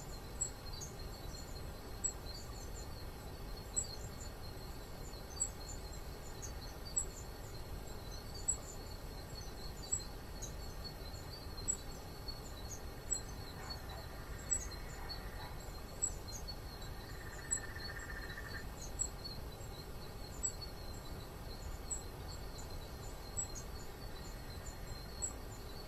Tathra: Night insects/birds/amphibians